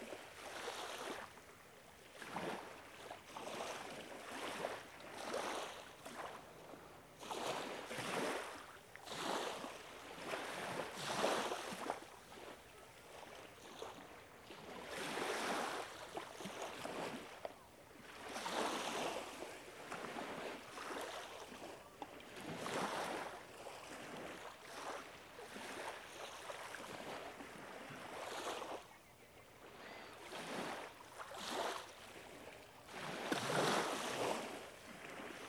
Carkeek Park
Tiny wavelets brush the shore as the sun sets on a calm December day at this waterfront park.
Major elements:
* Wavelets
* Mallards and seagulls
* Beachcombers
* Seaplanes
* Alas, no Burlington-Northern train (which runs along the waterfront)